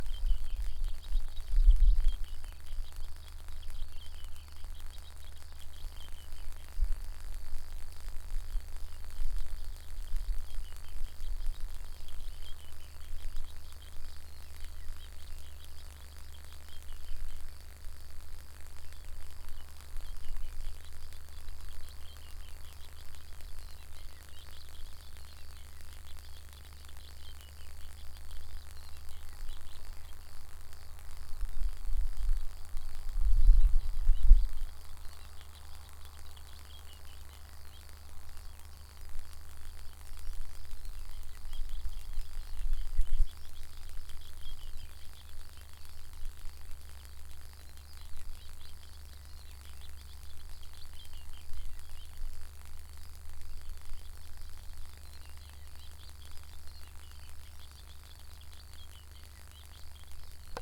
This sound was pop up from the cables of electricity.
Αποκεντρωμένη Διοίκηση Ηπείρου - Δυτικής Μακεδονίας, Ελλάς, 9 June